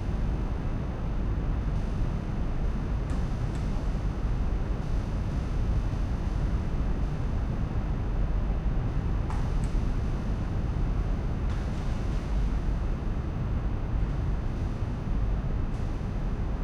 Oberbilk, Düsseldorf, Deutschland - Düsseldorf, tanzhaus nrw, main stage
At the empty main stage hall of the tanzhaus nrw. The sound of the ventilation and the electric lights.
This recording is part of the exhibition project - sonic states
soundmap nrw - sonic states, social ambiences, art places and topographic field recordings
soundmap nrw - social ambiences, sonic states and topographic field recordings